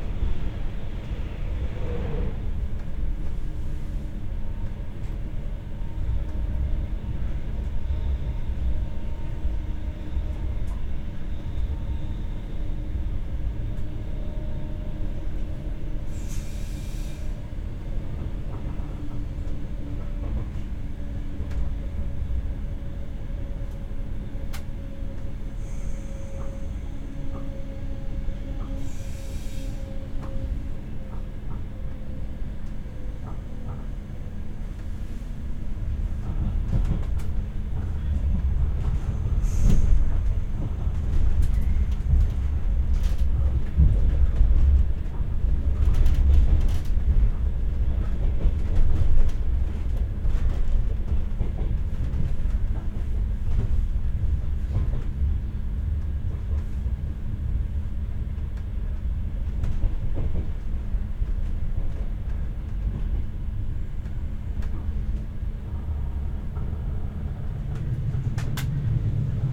Neumünster, Deutschland - 6 minutes on a train
About 6 minutes of a rather quiet train ride until arriving in Neumünster and the quietness is over. Rumbling, creaking, squeaking, announcement of next stop, doors, new loud passengers.
Zoom H6 recorder x/y capsule
Neumünster, Germany, 18 December 2016